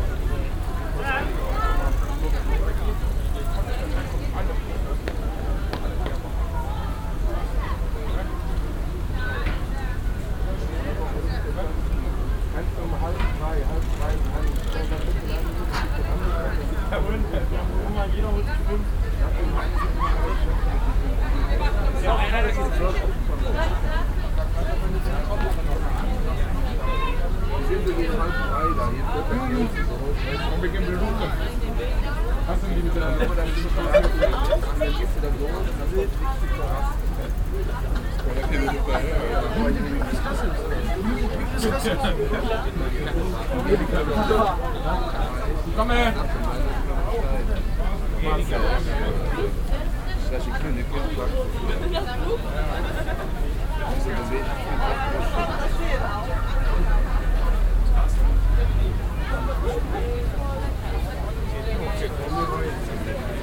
cologne, altstadt, rheinufer, schiffsanlegestelle
soundmap: cologne/ nrw
altstadt, rheinufer, internationale touristen und schulklassen an der anlegestelle der K/D Schiffahrtslinie
project: social ambiences/ listen to the people - in & outdoor nearfield recording